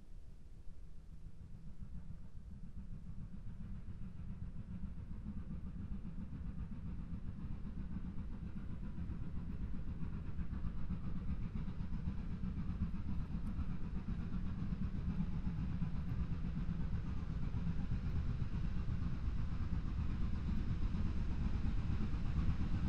Krugersdorp, South Africa - Steam Locomotive
A steam locomotive pulling passenger coaches on a day outing to the Magaliesberg. Behringer B5 with Omni capsules on a Jecklin Disc to SD702